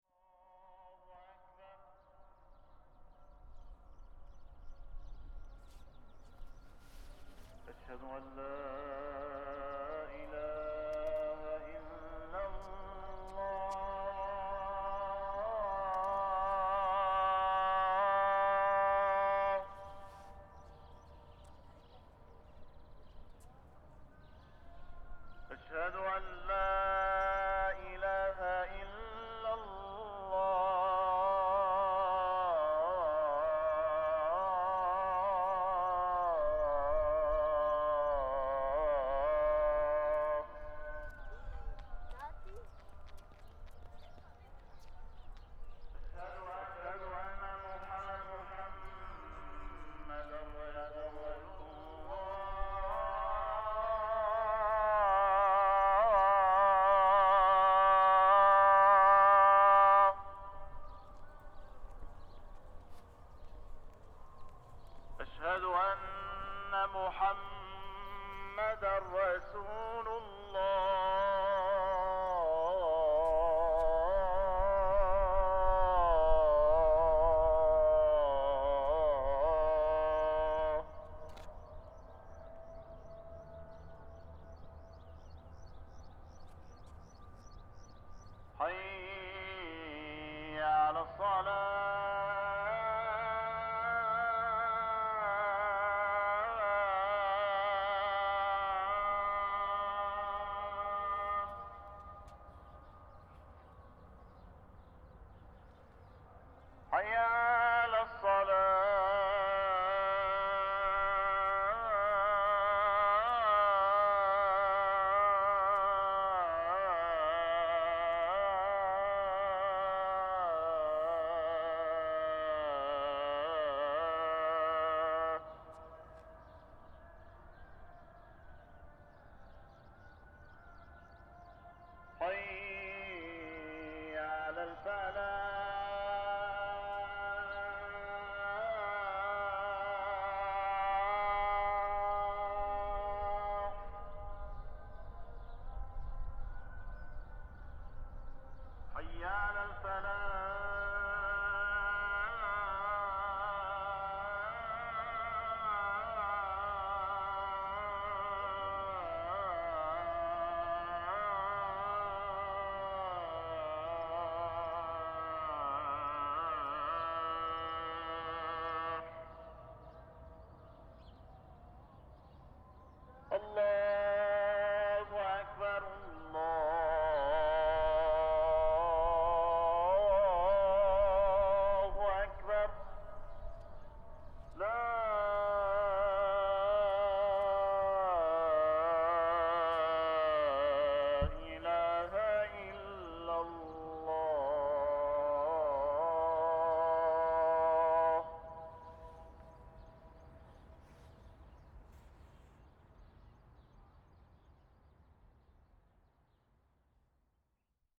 El Maarad, Tarablus, Libanon - muezzin echo at niemeyer fair ground tripoli
Muezzin echoing over the field of Tripoli International Fair designed by legendary Brazilian architect Oscar Niemeyer in 1963.